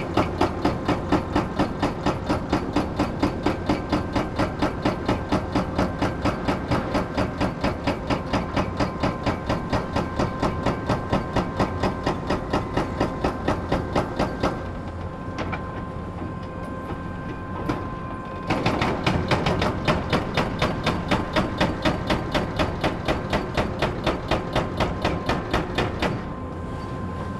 berlin, sonnenallee: aufgegebenes fimengelände - A100 - bauabschnitt 16 / federal motorway 100 - construction section 16: demolition of a logistics company
excavator with mounted jackhammer demolishes building elements, echo of the jackhammer, distant drone of a fog cannon, noise of different excavators
february 18, 2014
Deutschland, European Union, 18 February 2014